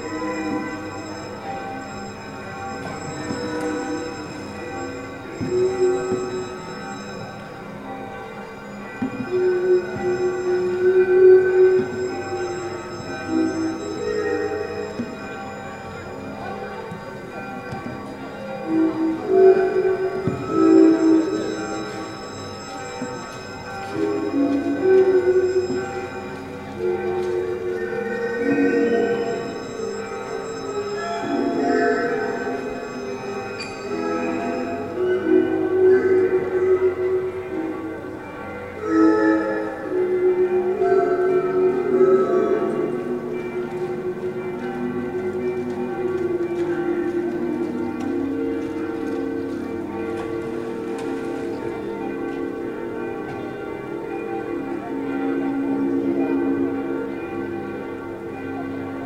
Český Krumlov, Tschechische Republik - Soundscape Atelier Egon Schiele Art Centrum (2)
Soundscape Atelier Egon Schiele Art Centrum (2), Široká 71, 38101 Český Krumlov
Český Krumlov, Czech Republic, 5 August 2012